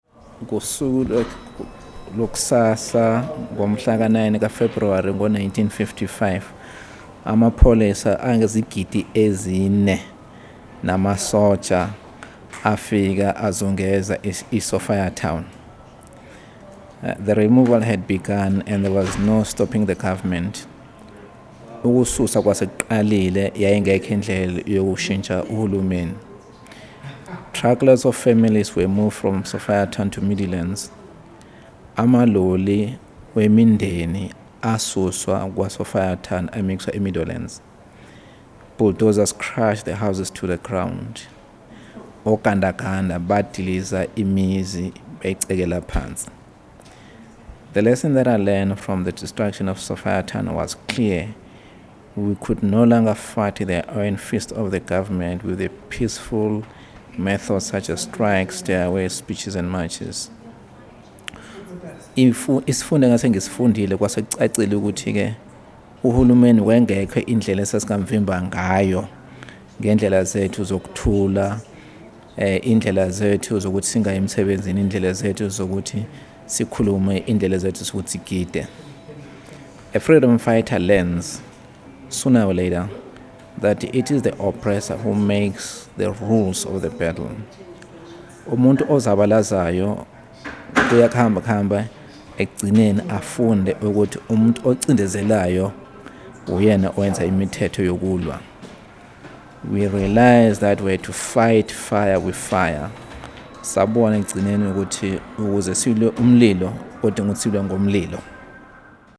Park Station, Johannesburg, South Africa - Sophia Town...
clipping from the original recordings for what became the radio piece LONG WALK abridged.
7 March, ~12:00